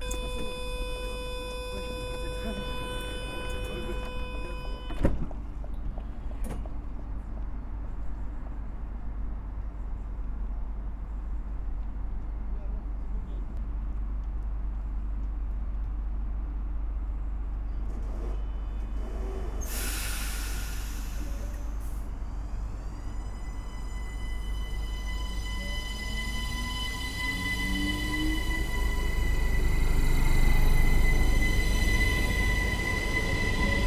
Centre, Ottignies-Louvain-la-Neuve, Belgique - LLN station
The students are going back home at the end of the week. There's a lot of suitcases.
Ottignies-Louvain-la-Neuve, Belgium, 12 March 2016